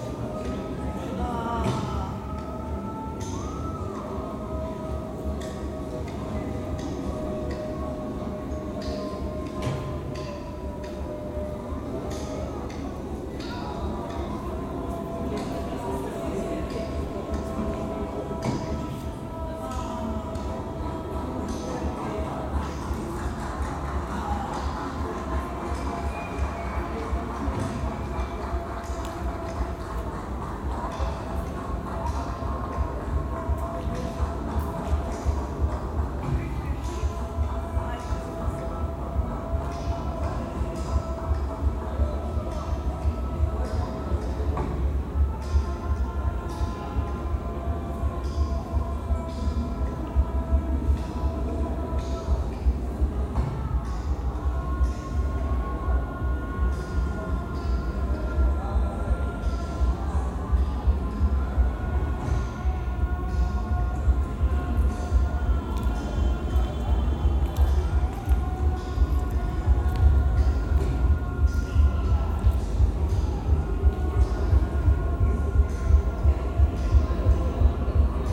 Kaunas, Lithuania, Marina Abramović’s exhibition
A walk in the first hall of Marina Abramović’s exhibition "Memory of Being".